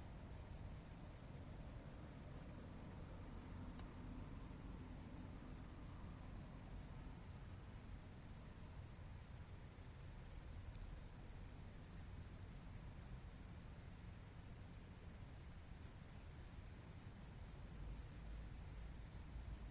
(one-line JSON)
{"title": "Clérey, France - Shrill insect", "date": "2017-08-02 22:00:00", "description": "While sleeping in the yard of an abandoned farm, this is the sound of the most shrill insect I have ever heard. I tested people, it's so shrill that some persons didn't hear the constant sound of this inferno insect.\nTested : 16 k Hz !\nDr. Lutz Nevermann said me : \" The insect sounds like Tettigonia viridissima \". The sound is the same (see wikipedia in english) and time of the day was good.", "latitude": "48.20", "longitude": "4.19", "altitude": "123", "timezone": "Europe/Paris"}